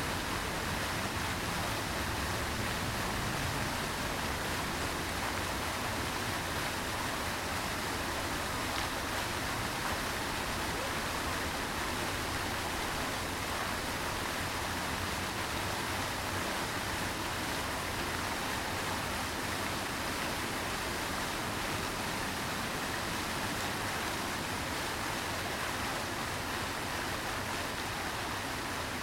{
  "title": "Teatr Wielki, Łódź, Polska - Fountain @ Dabrowski square",
  "date": "2014-09-17 13:51:00",
  "description": "Full sound cycle of fountain @ Dabrowskiego square in Łódź",
  "latitude": "51.77",
  "longitude": "19.47",
  "altitude": "214",
  "timezone": "Europe/Warsaw"
}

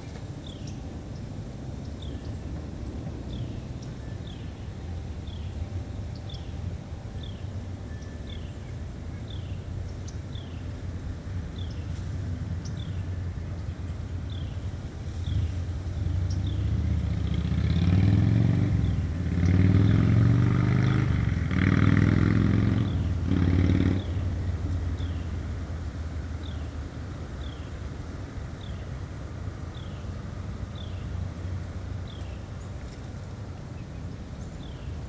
{
  "title": "Glendale Ln, Beaufort, SC, USA - Glendale Lane - Marsh",
  "date": "2021-12-27 15:30:00",
  "description": "Recording in the marsh surrounding a street in Beaufort, South Carolina. The area is very quiet, although some sounds from a nearby road do leak into the recording. There was a moderate breeze, and wind chimes can be heard to the right. Birds and wildlife were also picked up. The mics were suspended from a tree branch with a coat hanger. A low cut was used on the recorder.\n[Tascam Dr-100mkiii & Primo EM-272 omni mics]",
  "latitude": "32.41",
  "longitude": "-80.70",
  "altitude": "11",
  "timezone": "America/New_York"
}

